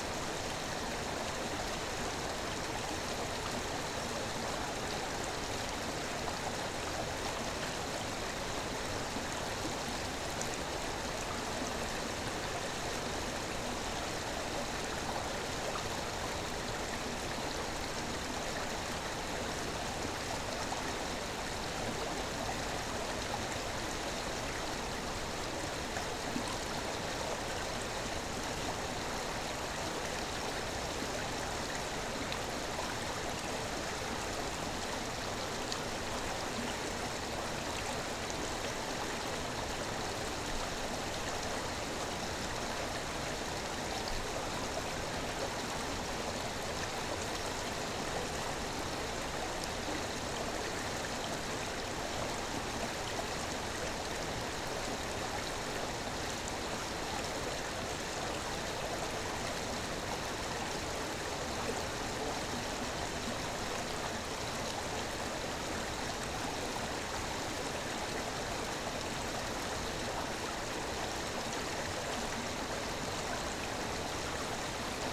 {"title": "Joneliškės, Lithuania, river Viesa", "date": "2020-05-01 14:50:00", "description": "small river Viesa under the bridge", "latitude": "55.47", "longitude": "25.59", "altitude": "107", "timezone": "Europe/Vilnius"}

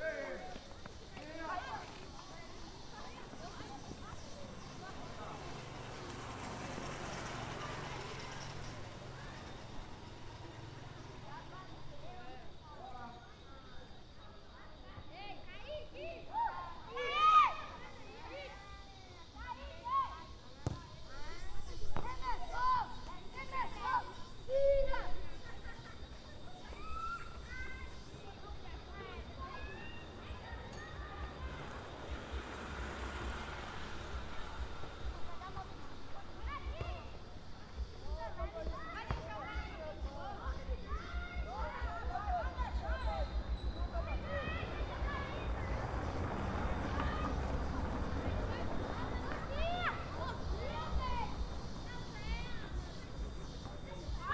{"title": "Cruz das Almas, BA, Brasil - Garotos Jogam Futebol com o Treinador FIA", "date": "2014-03-08 09:22:00", "description": "Captação feita com base da disciplina de Som da Docente Marina Mapurunga, professora da Universidade Federal do Recôncavo da Bahia, Campus-Centro de Artes Humanidades e Letras. Curso Cinema & Audiovisual. CAPTAÇÃO FOI FEITA COM UM PCM DR 50. MENINOS JOGAM FUTEBOL NO CAMPO LOCALIZADO AO LADO DA MATA DE CAZUZINHA EM CRUZ DAS ALMAS-BAHIA.", "latitude": "-12.67", "longitude": "-39.10", "timezone": "America/Bahia"}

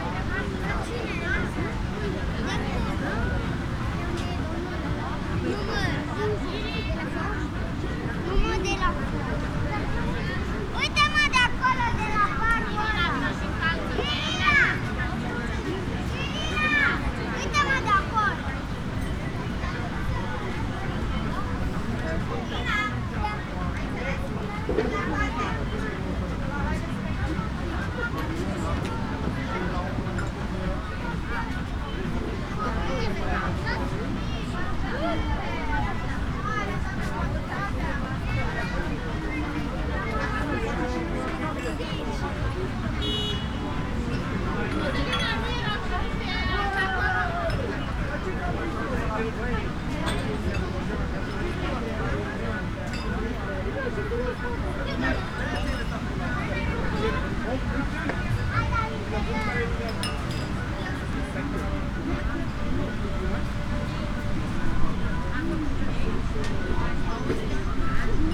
{"title": "Mamaia Beach, Constanța, Romania - Small Pub on the Beach", "date": "2019-06-23 23:00:00", "description": "Usually bars on the beach in Mamaia play pretty loud music occupying or rather invading the soundscape. This one was pretty tame and chill so a nice balance of sonic layers can be heard: the sea, people, low-music. Recorded on a Zoom F8 using a Superlux S502 ORTF Stereo Microphone.", "latitude": "44.26", "longitude": "28.62", "altitude": "3", "timezone": "Europe/Bucharest"}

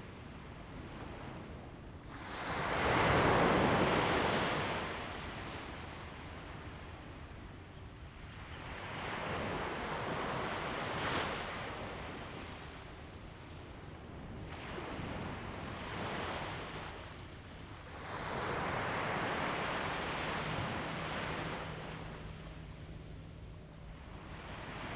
{"title": "Penghu County, Taiwan - Sound of the waves", "date": "2014-10-21 08:54:00", "description": "Sound of the waves\nZoom H2n MS +XY", "latitude": "23.56", "longitude": "119.64", "altitude": "4", "timezone": "Asia/Taipei"}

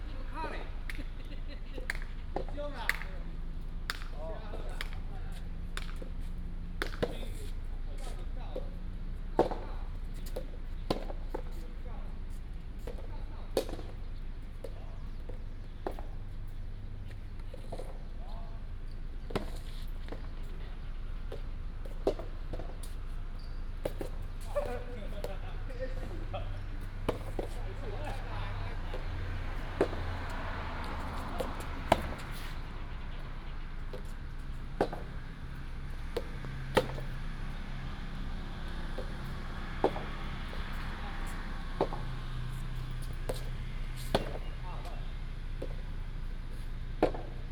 {"title": "新竹公六網球場, Hsinchu City - Playing tennis", "date": "2017-09-15 06:19:00", "description": "Next to the tennis court, traffic sound, Playing tennis, Binaural recordings, Sony PCM D100+ Soundman OKM II", "latitude": "24.80", "longitude": "120.96", "altitude": "23", "timezone": "Asia/Taipei"}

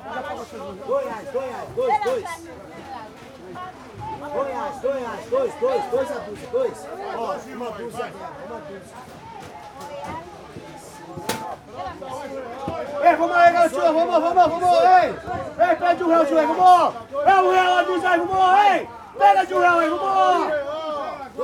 {"title": "Street Market Perus (Sao Paulo) - Banana seller in a Brazilian market", "date": "2022-06-03 15:00:00", "description": "In a peripheral area of Sao Paulo (Perus), Alessandro is one of the sellers from the \"Tigueis Banana\" stand. At the end of the market, the prices are low and he has to scream to sell all the banana before the end of the street market.\nRecorded by an ORTF setup Schoeps CCM4\non a Cinela ORTF suspension and a DIY Windscreen\nGPS: -23.407617, -46.757858\nSound Ref: BR-220603-03\nRecorded on 3rd of June 2022 at 3pm", "latitude": "-23.41", "longitude": "-46.76", "altitude": "775", "timezone": "America/Sao_Paulo"}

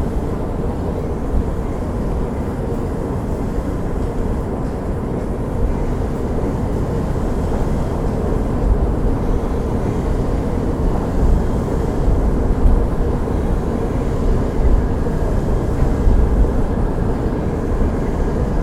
{"title": "Metro station Háje, escalators", "date": "2012-02-12 17:52:00", "description": "inside the platform in front of the singing escalators.", "latitude": "50.03", "longitude": "14.53", "altitude": "305", "timezone": "Europe/Prague"}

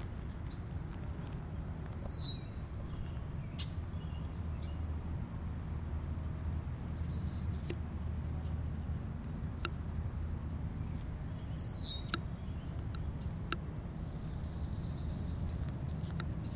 {"title": "Washington Park, South Doctor Martin Luther King Junior Drive, Chicago, IL, USA - Summer Walk 3", "date": "2011-06-18 14:45:00", "description": "Recorded with Zoom H2. Interactive walk through Washington Pk. Exploring the textures and rhythm of twigs bark, gravel and leaves.", "latitude": "41.79", "longitude": "-87.61", "altitude": "188", "timezone": "America/Chicago"}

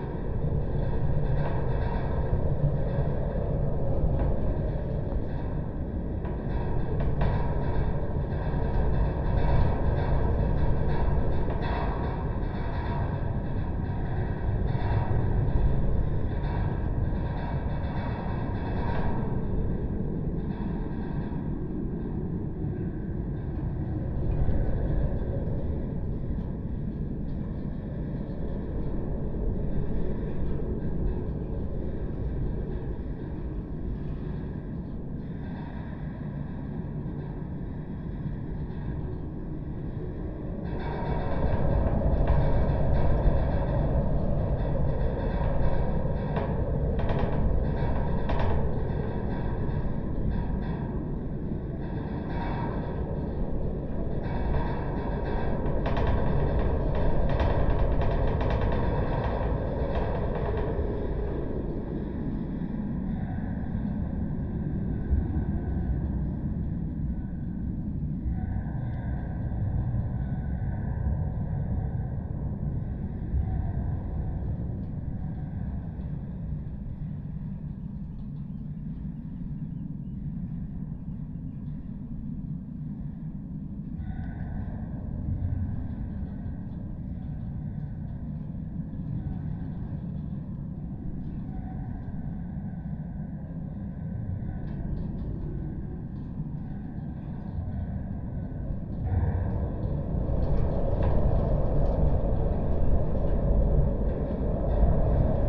{"title": "Spittal of Glenshee, Blairgowrie, UK - discarded", "date": "2022-06-11 11:39:00", "description": "discarded fence wire by the Allt Ghlinn Thaitneich", "latitude": "56.82", "longitude": "-3.47", "altitude": "354", "timezone": "Europe/London"}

